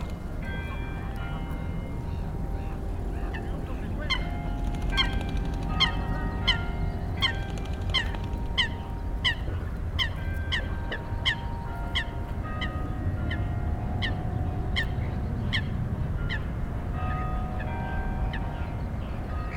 København, Denmark - Lake ambiance and distant bells
Sounds of the birds swimming : Eurasian Coot, Common Moorhen, Greater White-fronted Goose. At the backyard, the bells ringing 6PM. Pleasant distant sound with the lake ambiance.